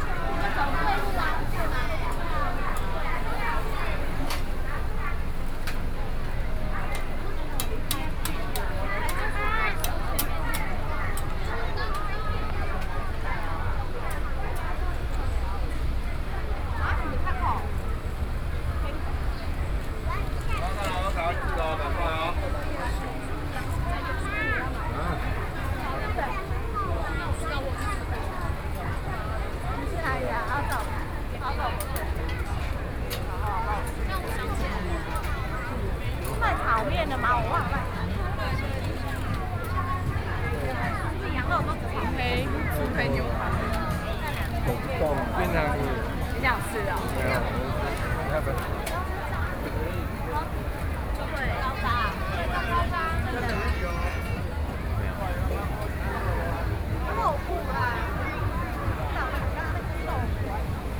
Gongyuan Rd., Luodong Township - Night Market
walking in the Street, walking in the Night Market, Traffic Sound, Various shops voices, Tourist